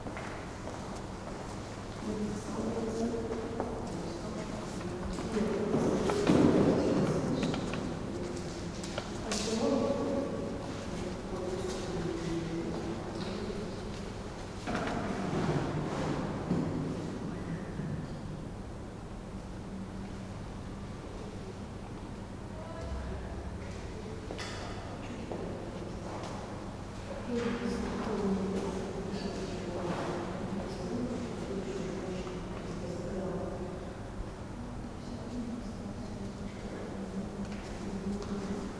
Dzerginsk, Nikolo-Ugreshsky Monastery, inside Nikolsky Cathedral
22 May 2011, ~16:00